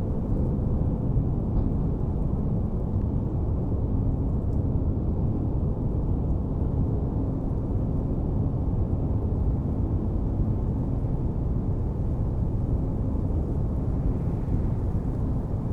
Místo v kopcích nad Zámkem Jezeří, kde jsou údajně rituální paleolotické kameny. Václav Cílek mluví o proměnách krajiny během cesty pro účastníky Na pomezí samoty